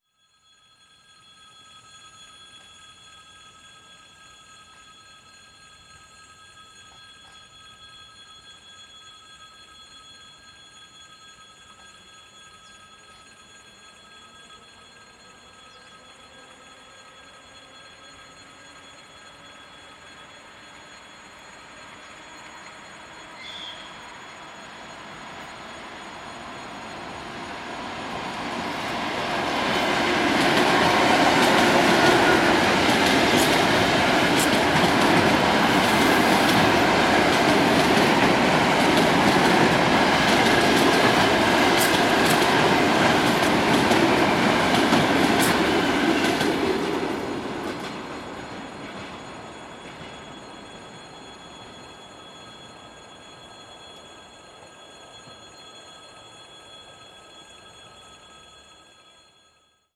Королёв, Московская обл., Россия - Passing of suburban electric train
Steanding near the railway pedestrian crossing. Suburban electric train is passing from left to right. Warning bell is ringging all recording time. Be careful! The train can sounds very loud!
Recorded with Zoom H2n.
Московская область, Центральный федеральный округ, Россия, 2 June 2021, 14:22